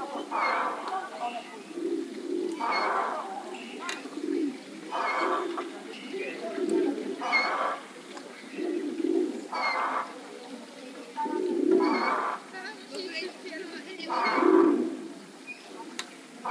{
  "title": "Market square at Cieszyn, Polska - (116) BI squeaking swinging bench",
  "date": "2017-05-01 11:40:00",
  "latitude": "49.75",
  "longitude": "18.63",
  "altitude": "301",
  "timezone": "Europe/Warsaw"
}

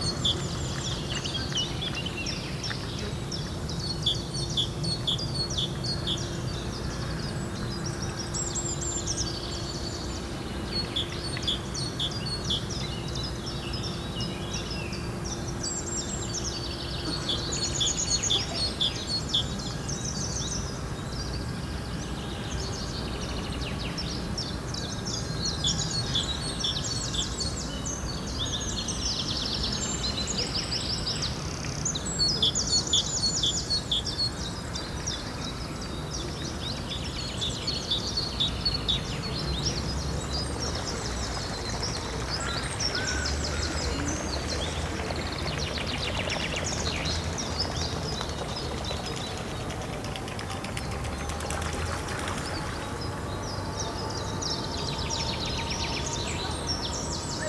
{
  "title": "Bois des Bruyères, Waterloo, Belgique - Birds near the bond ambience",
  "date": "2022-04-11 11:00:00",
  "description": "Oiseaux au bord de l'étang.\nJoggers, dogs.\nTech Note : Ambeo Smart Headset binaural → iPhone, listen with headphones.",
  "latitude": "50.72",
  "longitude": "4.38",
  "altitude": "104",
  "timezone": "Europe/Brussels"
}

